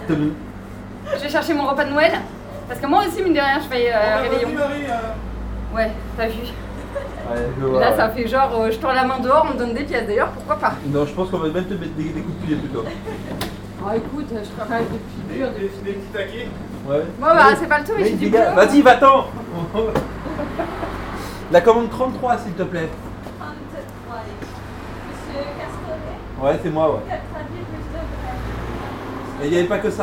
Maintenon, France - Bakery
Recording of a bakery just before Christmas.
24 December 2016, 16:40